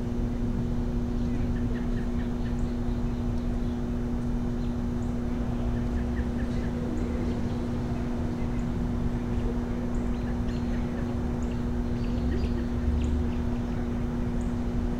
King Springs Rd SE, Smyrna, GA, USA - Tennis games in Rhyne Park
Recording near two tennis courts in Cobb County Rhyne Park. Games were being played quietly in both courts. A low hum emanates from the green electrical box behind the microphones. Other various sounds can be heard from around the area.
[Tascam DR-100mkiii & Primo EM-272 omni mics]
Georgia, United States, 7 February 2021, ~18:00